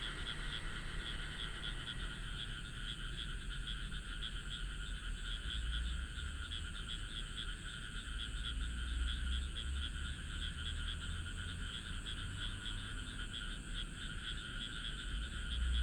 八德區霄裡路, Taoyuan City - Night farmland
Rice Fields, Traffic sound, Frog sound